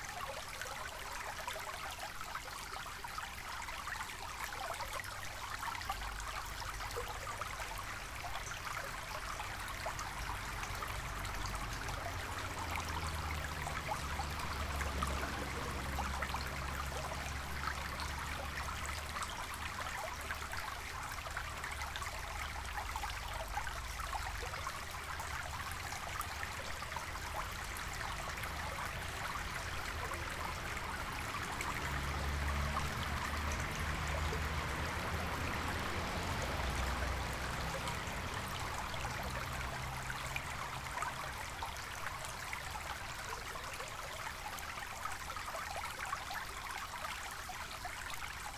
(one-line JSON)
{"title": "Odenthal, Liesenberger Mühle - little creek", "date": "2010-08-11 18:35:00", "latitude": "51.04", "longitude": "7.18", "altitude": "153", "timezone": "Europe/Berlin"}